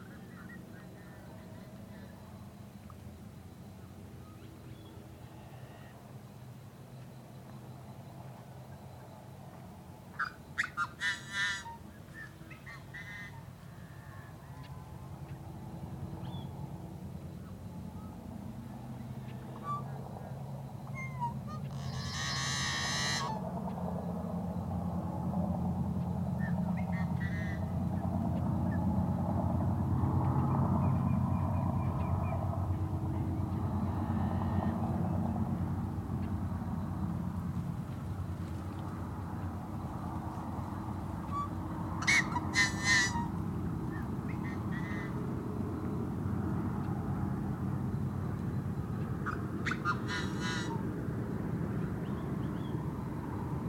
Metabolic Studio Sonic Division Archives:
Sunset Chorus of Birds on Diaz Lake. Includes ambient traffic noise from highway 395. Recorded on Zoom H4N
California, United States